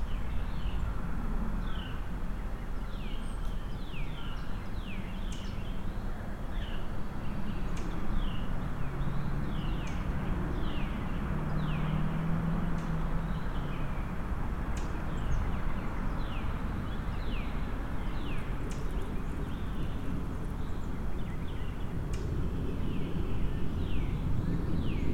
Alpharetta Hwy, Roswell, GA, USA - Birds & Traffic In Roswell Professional Park
Birdsong in the middle of a small business park. I was present in this location to drop off vintage audio equipment to a repair shop, and these are the sounds that could be heard just a few shops down. Traffic sounds can be heard from the nearby Alpharetta Highway, and an HVAC fan can be heard to the right. Other sounds can be heard from the surrounding buildings. EQ was done in post to reduce rumble.
[Tascam DR-100mkiii & Roland CS-10EM binaural earbuds w/ foam covers & fur]